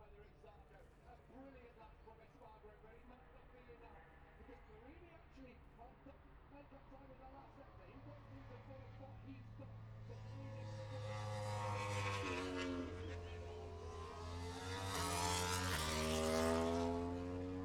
Silverstone Circuit, Towcester, UK - british motorcycle grand prix 2022 ... moto grandprix ...
british motorcycle grand prix 2022 ... moto grand prix qualifying two ... outside of copse ... dpa 4060s clipped to bag to zoom h5 ...